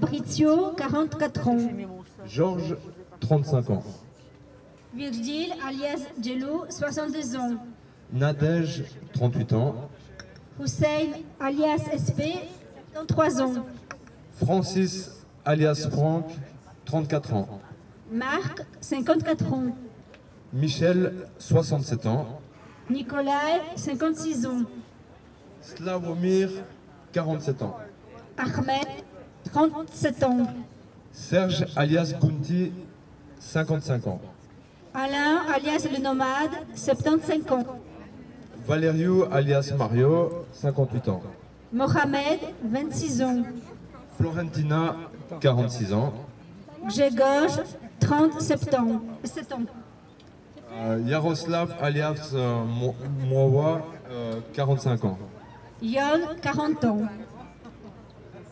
Place de l'Albertine, Bruxelles, Belgique - Reading the names of the 76 homeless people who died on the street in 2021
A tree was planted 12 years ago to honor the homeless who died on the streets.
Un arbre a été planté il y a 12 ans pour rendre hommage aux morts de la rue.
Chaque année la liste de tous ceux qui sont morts dans la rue est lue ici.
Tech Note : Olympus LS5 internal microphones.
Région de Bruxelles-Capitale - Brussels Hoofdstedelijk Gewest, België / Belgique / Belgien, 25 May, 14:06